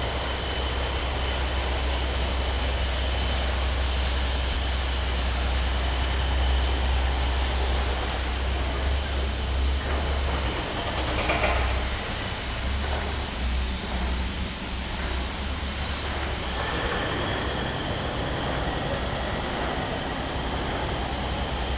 EC-1 od strony ul. Kilinskiego, Lodz
EC1 Lodz, autor: Aleksandra Chciuk
17 November